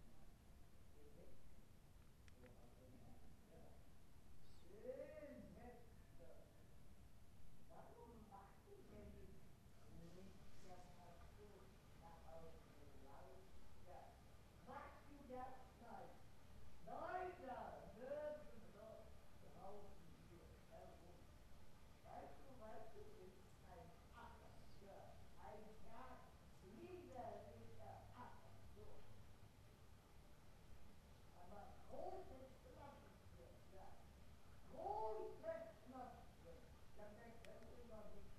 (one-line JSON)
{"title": "Höchstadt, Deutschland - talk during the small hours", "date": "2013-07-19 03:15:00", "description": "the softness of the recording may not represent the original dynamics of the woman speaking very loudly with nonexistent people during the night, as I could hear her, as I was teaching at the geriatric home in Höchstadt then. She had incredible things to tell, & everybody was made to listen.", "latitude": "49.70", "longitude": "10.80", "altitude": "270", "timezone": "Europe/Berlin"}